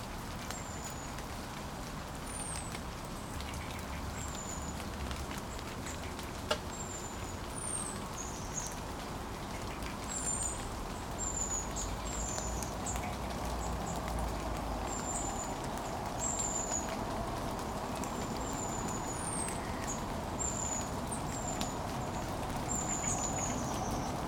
{"title": "Mowbray St, Newcastle upon Tyne, UK - Plantation woodland - City Stadium", "date": "2019-10-13 15:10:00", "description": "Birdsong and falling rain in small area of woodland at the City Stadium, Newcastle upon Tyne. Recorded on a Tascam DR-05 as part of Tyneside Sounds Society Record-A-Thon on 13th October 2019.", "latitude": "54.98", "longitude": "-1.59", "altitude": "31", "timezone": "Europe/London"}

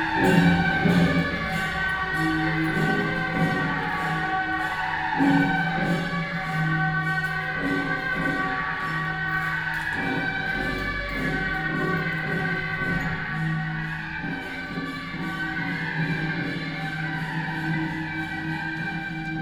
{"title": "Daren St., Tamsui Dist., New Taipei City - temple festivals", "date": "2016-06-10 19:35:00", "description": "Traditional temple festivals, Firecrackers", "latitude": "25.18", "longitude": "121.44", "altitude": "49", "timezone": "Asia/Taipei"}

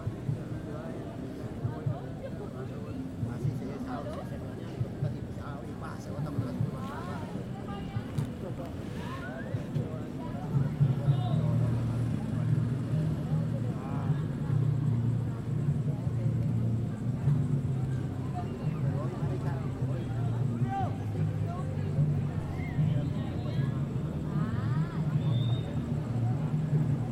{"title": "Paz de Ariporo, Casanare, Colombia - Plaza central de la Paz de Ariporo", "date": "2013-06-05 19:23:00", "description": "GRabaciòn en la plaza central.", "latitude": "5.88", "longitude": "-71.89", "altitude": "272", "timezone": "America/Bogota"}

